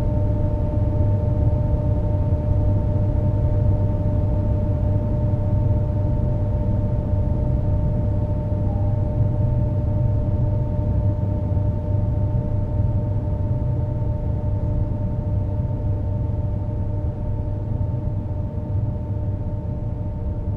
{"title": "Quai Saint-Pierre, Toulouse, France - hydroelectric power station", "date": "2022-03-06 14:00:00", "description": "The EDF Bazacle Complex, hydroelectric power station\n7\nturbines\nStill in use\n3000\nKW\nof installed power capacity\nCaptation : ZOOMH6 + Microphone AKG C411", "latitude": "43.60", "longitude": "1.43", "altitude": "137", "timezone": "Europe/Paris"}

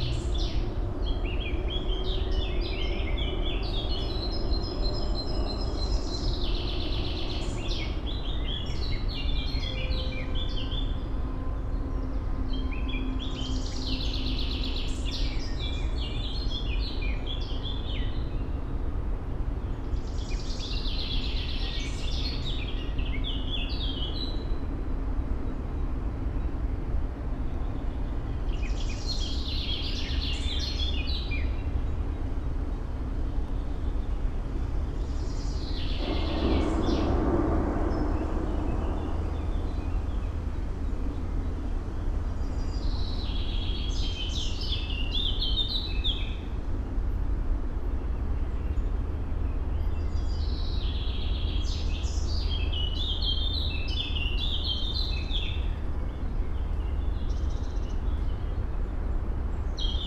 {"title": "Dieswee, Esch-Uelzecht, Luxemburg - morning city park ambience", "date": "2022-05-10 08:20:00", "description": "Esch-sur-Alzette, Parc Muncipal, morning ambience, city noise, a Common chaffinch\n(Sony PCM D50, Primo172)", "latitude": "49.49", "longitude": "5.98", "altitude": "340", "timezone": "Europe/Luxembourg"}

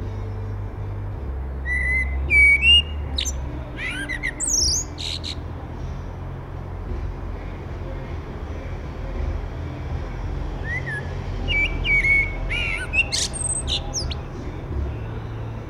{"title": "Háaleiti, Reykjavik, Iceland - The rock band and the singer", "date": "2012-06-09 22:00:00", "description": "Rock band was playing somewhere in the neighborhood. Suddenly a Common Blackbird with a nest in a nearby garden arrived and started to sing. First gently as he was shy but suddenly just before the band started to play Jimmy Hendrix and Janis Joplin the bird began to sing very loud a fabulous song, something I have never heard it sing before, but this bird has been around my house for some years now.\nThis was recorded with Parabolic dish with Shure MX391/O capsules with Sound Professionals PIP-Phantom power adapter connected to Sound devices 744T recorder.\nMore information and longer version can be found here:", "latitude": "64.12", "longitude": "-21.85", "altitude": "11", "timezone": "Atlantic/Reykjavik"}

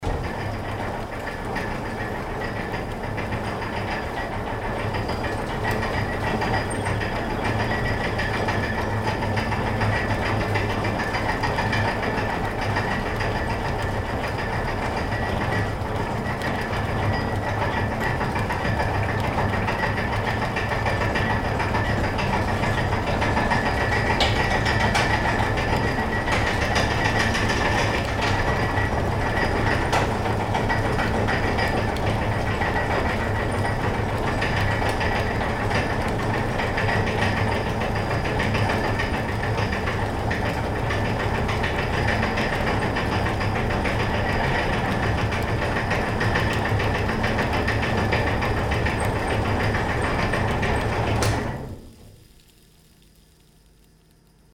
{"title": "heinerscheid, cornelyshaff, brewery - heinerscheid, cornelyshaff, chain conveyor", "date": "2011-09-12 17:25:00", "description": "The third step of the production is the sound of a chain conveyor that transports the grinded malt into the heat tanks.\nHeinerscheid, Cornelyshaff, Brauerei, Kettenförderer\nDer dritte Schritt der Produktion ist das Geräusch von einem Kettenförderer, der das gemahlene Malz in die Wärmetanks transportiert.\nHeinerscheid, Cornelyshaff, tapis roulant\nLa troisième étape de la production est celui d’un tapis roulant qui transporte le malt moulu dans les cuves chauffantes.", "latitude": "50.10", "longitude": "6.09", "altitude": "525", "timezone": "Europe/Luxembourg"}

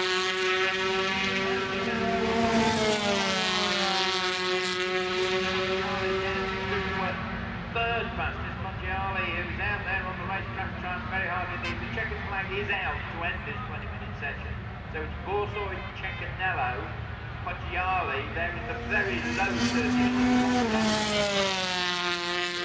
{"title": "Castle Donington, UK - British Motorcycle Grand Prix 2002 ... 125 ...", "date": "2002-07-14 09:00:00", "description": "125cc motorcycle warm up ... Starkeys ... Donington Park ... warm up and all associated noise ... Sony ECM 959 one point stereo mic to Sony Minidisk ...", "latitude": "52.83", "longitude": "-1.37", "altitude": "81", "timezone": "Europe/Berlin"}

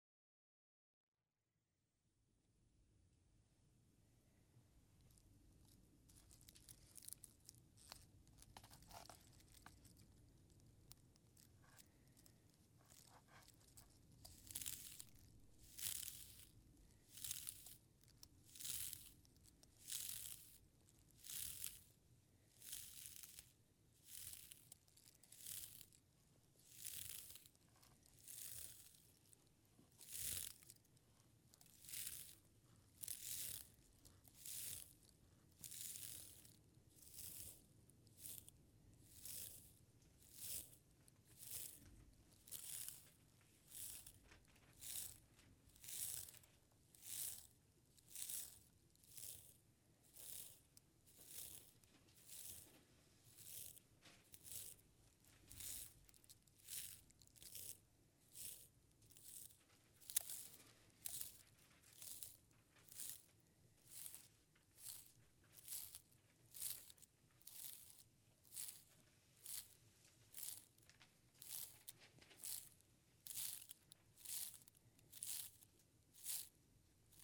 {"title": "Windermere, Cumbria, UK - Carding wool fibres with a teasel", "date": "2012-01-04 19:45:00", "description": "This is the sound of me and Diana carding wool using teasels. In this recording we are sitting by the fireside in a non-centrally heated house, as people have done for thousands of years, using teasels to card (or organise) some raw wool fibres. I think we were using Herdwick fibres for this activity; you can hear the scratchy tines of the teasels, but also the grip and sturdiness of the Herdwick fibres. Of course you can also hear the low steady comforting drone of the fire, also.", "latitude": "54.37", "longitude": "-2.92", "altitude": "51", "timezone": "Europe/London"}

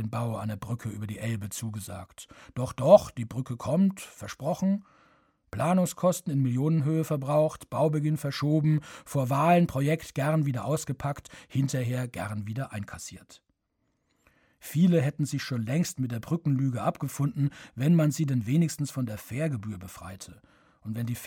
{"title": "zwischen darchau & neu-darchau - keine brücke", "date": "2009-08-08 21:40:00", "description": "Produktion: Deutschlandradio Kultur/Norddeutscher Rundfunk 2009", "latitude": "53.24", "longitude": "10.89", "altitude": "4", "timezone": "Europe/Berlin"}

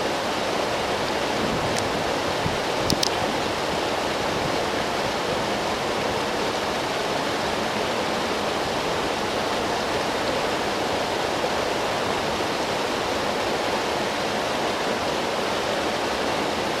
{"title": "ул. Антикайнена, Петрозаводск, Респ. Карелия, Россия - On the bridge over the Neglinka river", "date": "2020-02-13 13:22:00", "description": "On the bridge over the Neglinka river. You can hear the water rushing. Day. Warm winter.", "latitude": "61.79", "longitude": "34.35", "altitude": "73", "timezone": "Europe/Moscow"}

